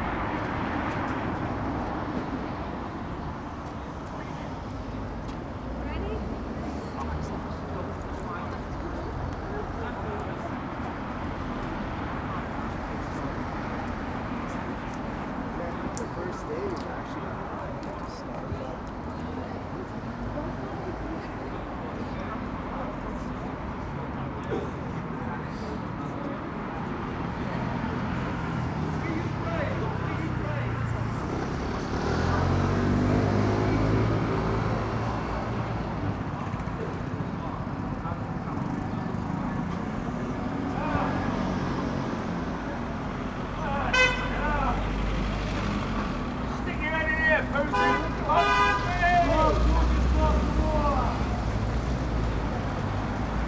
Embassy of the Russian Federation - "Stop Putin, Stop the War!"